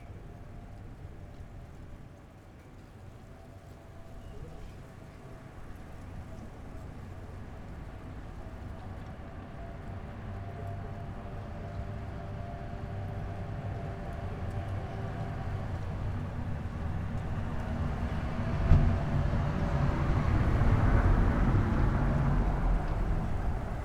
bad freienwalde/oder: uchtenhagenstraße - the city, the country & me: street ambience
mic in the window, street ambience, rustling leaves, church bells
the city, the country & me: january 4, 2015
Bad Freienwalde (Oder), Germany, 4 January 2015, ~12:00